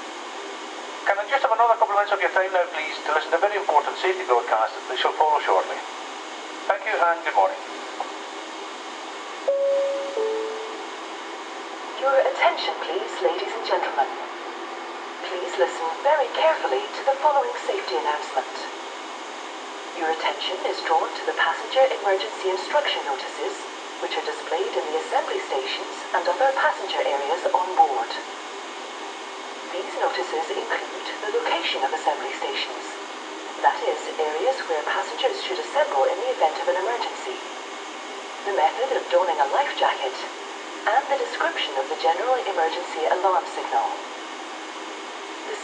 W Bank Rd, Belfast, UK - Stenaline Ferry – Belfast to Cairnryan – Pandemic Intercom
Recorded with a Sound Devices MixPre-3 and a pair of DPA 4060s.
12 June, ~11:00